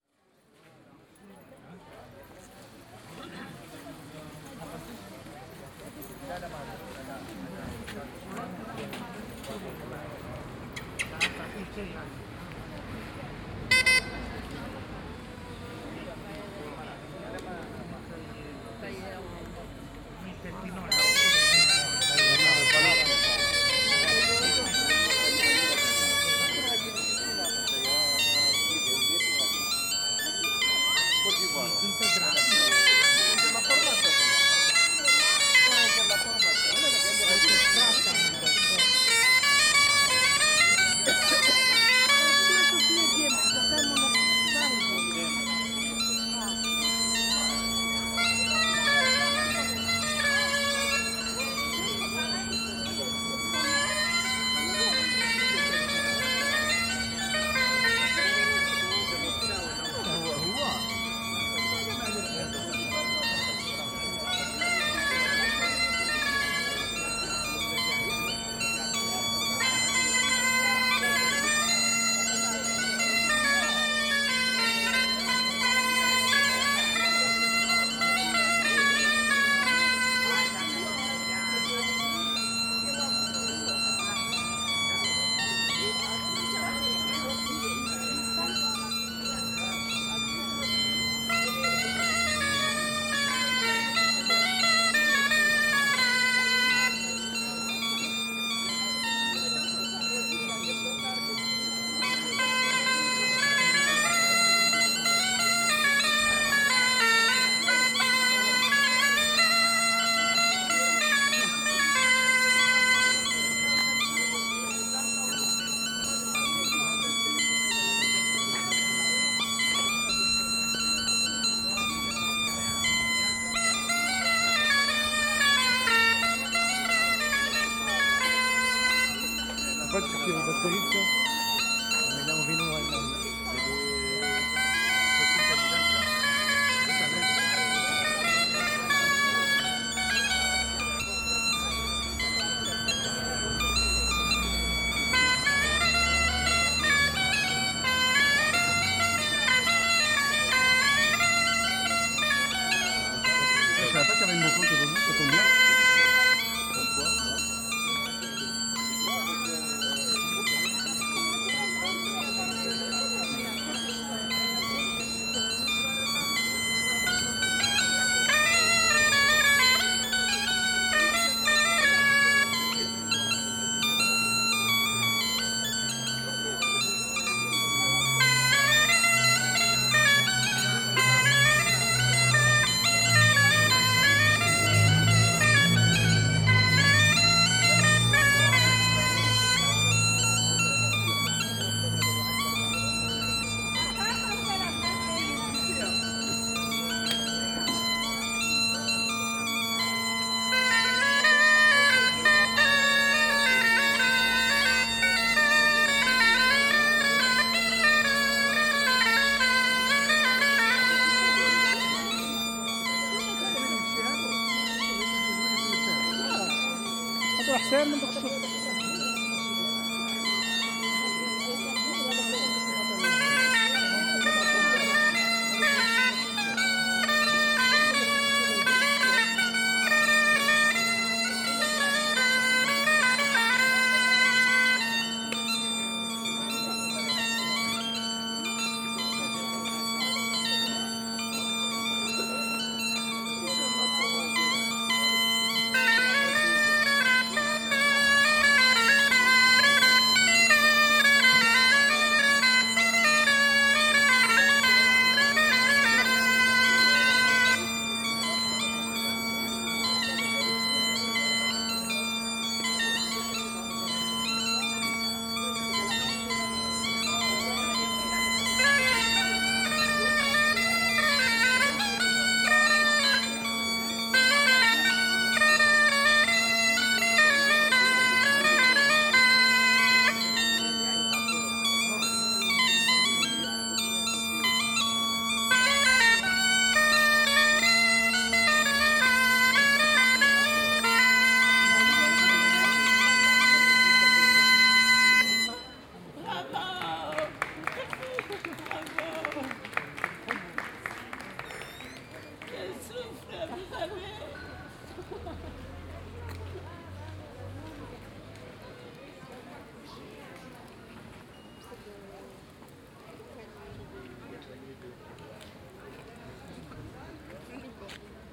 Av. Capart, Jette, Belgique - Bagpipe in a flea market
Cornemuse dans une brocante.
Tech Note : SP-TFB-2 binaural microphones → Olympus LS5, listen with headphones.